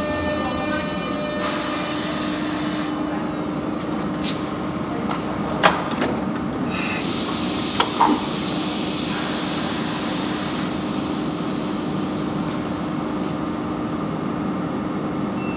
Manlleu, Barcelona, España - Estació de tren
Estació de tren
Manlleu, Barcelona, Spain, 23 May, ~04:00